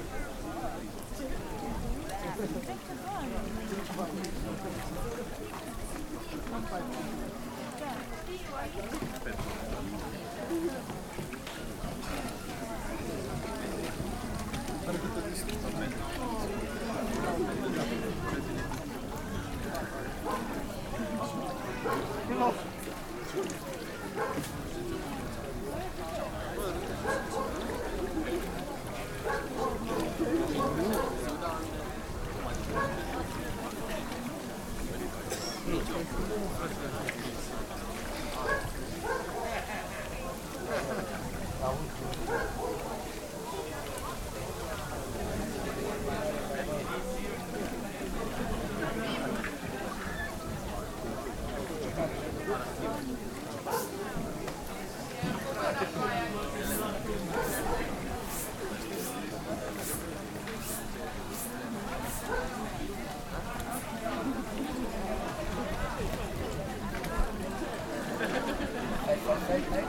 Romania - Relaxing by the water
Recording made while sitting close to the lake water on a summer day, made with a Shure MV 88.